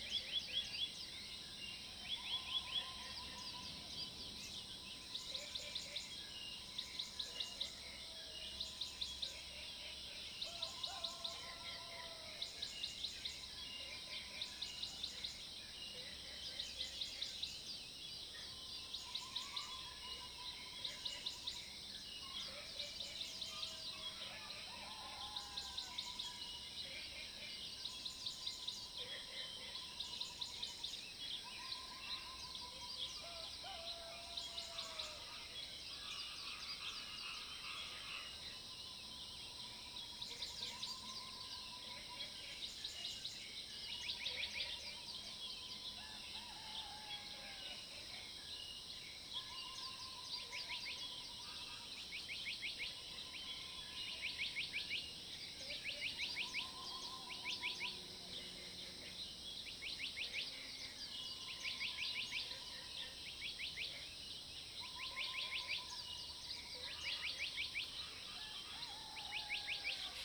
種瓜路4-2號, TaoMi Li, Puli Township - Early morning
Crowing sounds, Bird calls, Early morning
Zoom H2n MS+XY